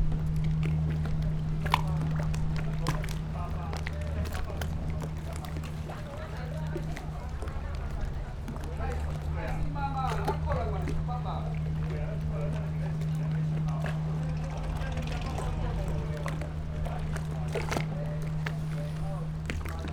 赤崁遊客碼頭, Baisha Township - Next to the pier
Tide, Quayside, Small pier
Zoom H6 + Rode NT4